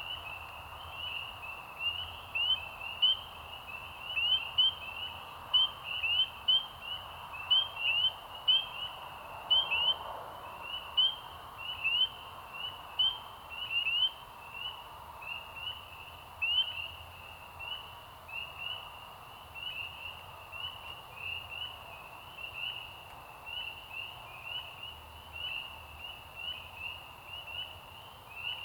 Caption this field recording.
After almost a week of temperatures in the upper 50s/lower 60s, the temperature dropped and these Pseudacris crucifer chorus frogs became a little subdued by 12am on a Saturday night. For many years, this marsh has been one of several local sites for the state's annual frog and toad survey. From 1882-1902, this site bordered the roadbed for the Bear Lake & Eastern Railroad. Stereo mics (Audio-Technica, AT-822 & Aiwa CM-TS22), recorded via Sony MDs (MZ-NF810 & MZ-R700, pre-amps) and Tascam DR-60DmkII.